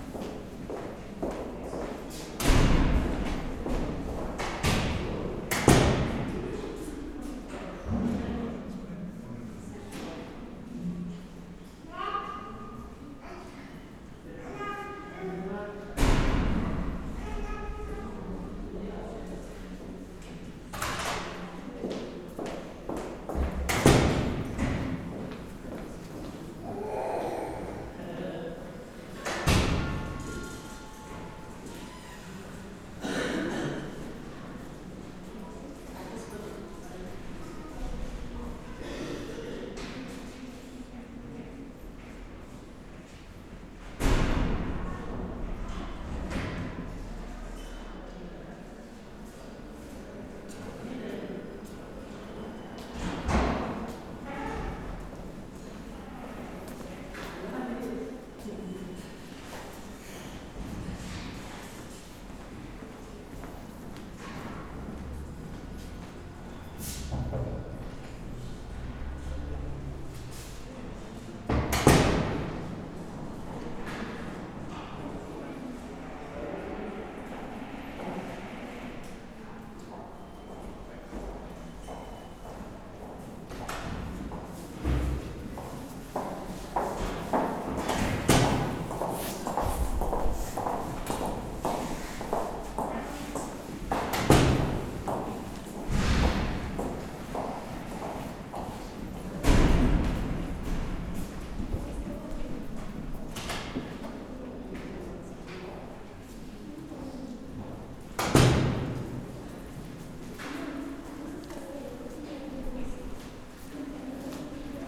berlin, donaustraße: rathaus neukölln, bürgeramt, gang - the city, the country & me: neukölln townhall, citizen centre, hallway
the city, the country & me: february 18, 2013